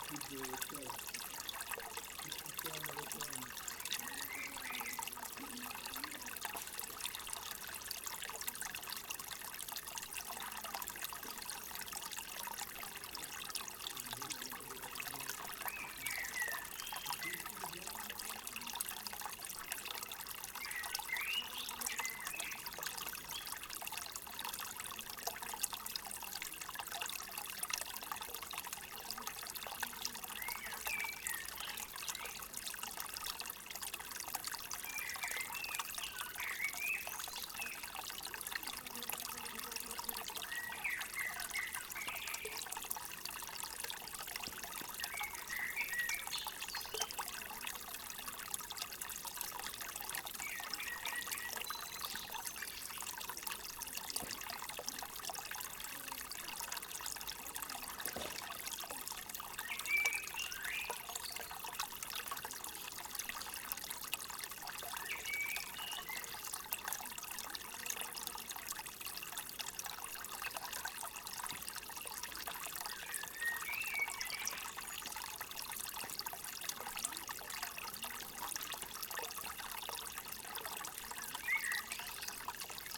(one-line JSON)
{"title": "Нагірна вул., Вінниця, Вінницька область, Україна - Alley12,7sound14stream", "date": "2020-06-27 13:40:00", "description": "Ukraine / Vinnytsia / project Alley 12,7 / sound #14 / stream", "latitude": "49.21", "longitude": "28.46", "altitude": "246", "timezone": "Europe/Kiev"}